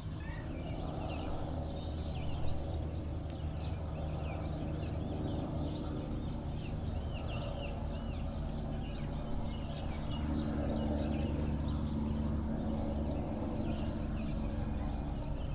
CILAOS centre - 20181101 0827-CILAOS ambiance sonore du au tourisme
Le problème c'est que c'est intermittent durant 5 à 7h de temps tout de même, plutôt que regroupé durant une tranche horaire limitée: attendre que ça passe signifie renoncer à la matinée entière et le calme durable ne revient jamais vraiment avant qu'il fasse couvert.
Cette ambiance sonore provoque un cumul de dégâts sur la nature et la société:
1: ça empêche les oiseaux endémiques de communiquer et défendre convenablement leur territoire en forêt face à une concurrence, en particulier avec le merle-maurice mieux adapté qu'eux au bruit: cet avantage ainsi donné au merle-maurice aide encore un peu plus les plantes envahissantes qui mettent en danger la forêt primaire.
2: ça induit un tourisme agressif et saccageur qui se ressent au sentier botanique. En présence d'un tel vacarme personne n'a idée de calmer des enfants qui crient ou d'écouter les oiseaux: le matin les familles avec enfants font beaucoup plus de dégâts car la nature n'est qu'un défouloir et rien d'autre.
1 November 2018, ~08:00